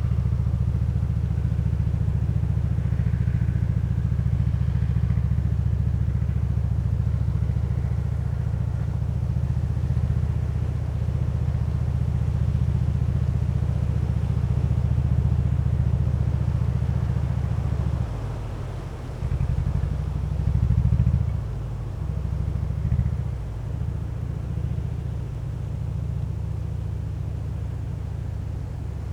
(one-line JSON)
{"title": "Lithuania, near Utena, from under the hay", "date": "2012-10-05 15:10:00", "description": "it's going to drizzle and I hid a recorder under a pile of hay..tractor working in the distance...", "latitude": "55.55", "longitude": "25.56", "altitude": "101", "timezone": "Europe/Vilnius"}